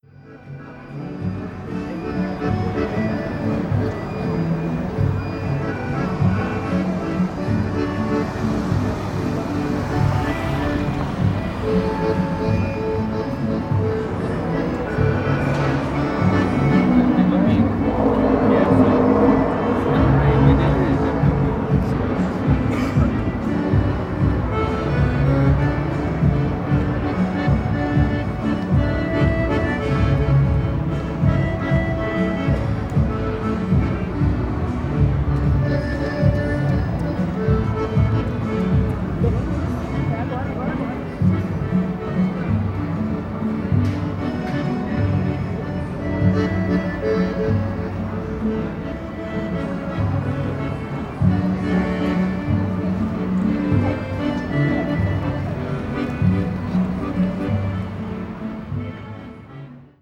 Calçadão de Londrina: Músico de rua: sanfoneiro - Músico de rua: sanfoneiro / Street musician: accordionist
Panorama sonoro: apresentação de um músico de rua com acordeom, sábado pela manhã, em um canto entre edificações no Calçadão. A campainha eletrônica de alerta de abertura e fechamento do portão de um estacionamento de condomínio soava. Em frente ao músico, do outro lado do Calçadão, uma loja emitia músicas a partir de equipamentos de som. Veículos transitavam por ruas que cruzam o Calçadão e pedestres circulavam por essa avenida.
Sound panorama: presentation of a street musician with accordion, Saturday morning, in a corner between buildings on the Boardwalk. The electronic doorbell warning of opening and closing the gate of a condominium parking lot sounded. In front of the musician, on the other side of the Boardwalk, a store issued music from sound equipment. Vehicles passed through streets that cross the Boardwalk and pedestrians circulated along this avenue.